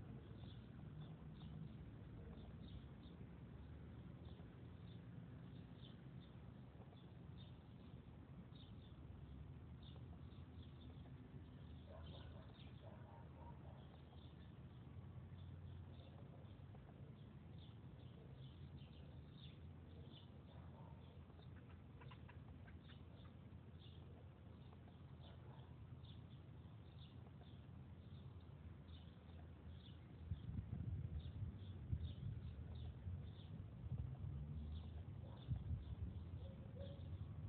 Godoy Cruz, Mendoza, Argentina - Barrio y pajaritos.
Entre arboles, pajaros y animalias se funden en el fondo con el ruido de la ciudad.
7 July, 16:15